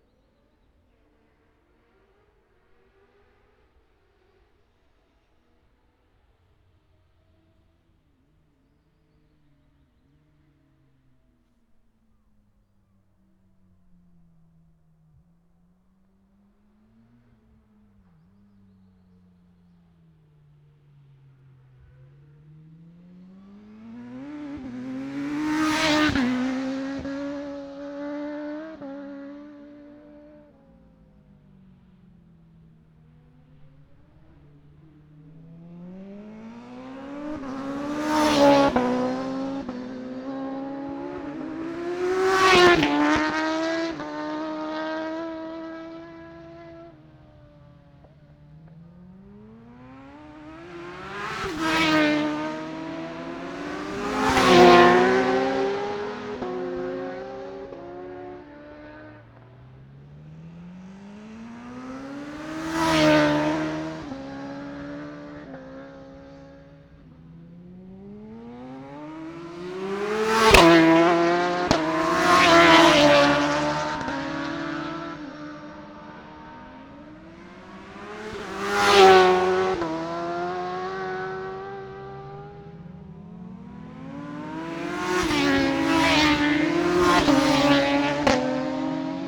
Scarborough, UK - motorcycle road racing 2012 ...
750cc+ practice ... Ian Watson Spring Cup ... Olivers Mount ... Scarborough ... binaural dummy head ... grey breezy day ...
15 April